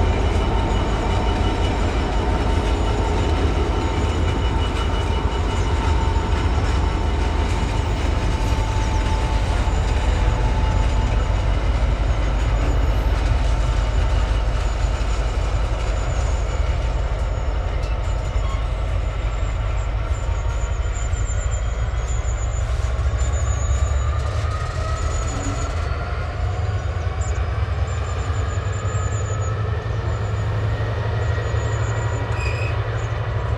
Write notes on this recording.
I went to try out my new toy and where better than where trains pass from right to left. MixPre 6 11 with AB Pluggies set 2 feet apart.